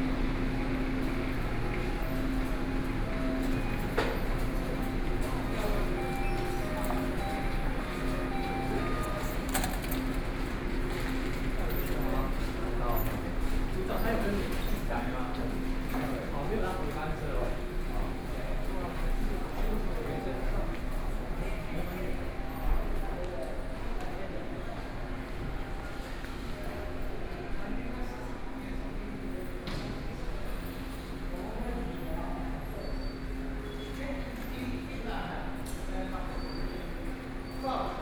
From the platform, Out of the station, Zoom H4n+ Soundman OKM II
Miaoli County, Taiwan